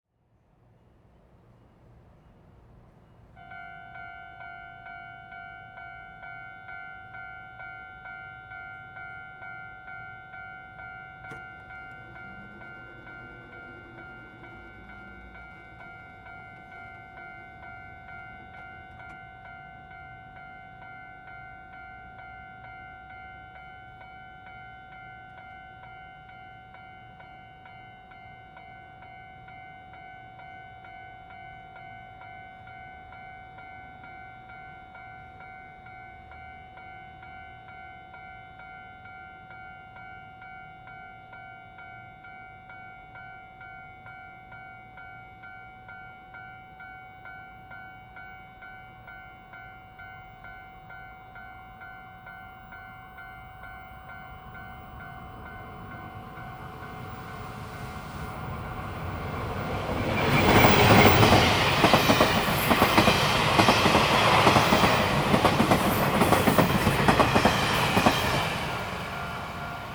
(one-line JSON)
{"title": "龍津路, Houlong Township - Railroad Crossing", "date": "2017-09-19 15:59:00", "description": "Railroad Crossing, The train runs through, Next to the tracks, Traffic sound, Binaural recordings, Zoom H2n MS+XY +Spatial audio", "latitude": "24.61", "longitude": "120.76", "altitude": "7", "timezone": "Asia/Taipei"}